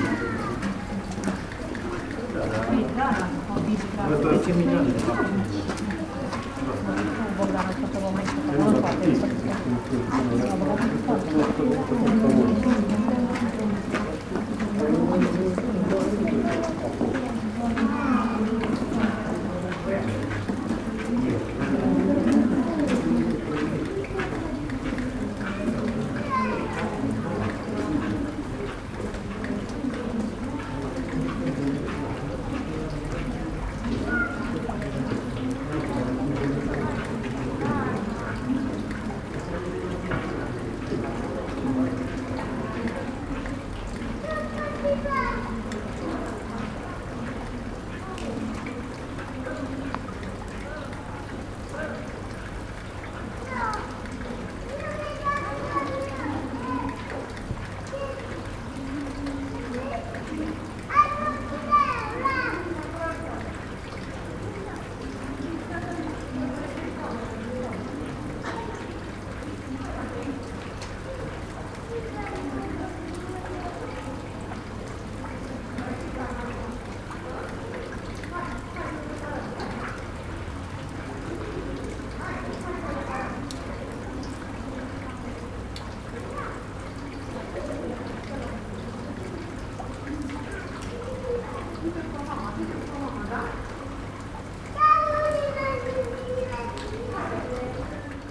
Strada Postăvarului, Brașov, Romania - 2020 Christmas in Brasov, Transylvania - A Suprisingly Calm Street
It was Christmas yesterday, so I took a walk through the historical city centre. Despite the pandemic there were many people on the streets. Yet as usual, they pack the "main street" of sorts (think Oxford Street in London) but a couple of steps away there's a parallel street which is almost empty so you can hear rain drops and roof drainpipes. Recorded with Superlux S502 Stereo ORTF mic and a Zoom F8 recorder.
România, 25 December 2020, 17:55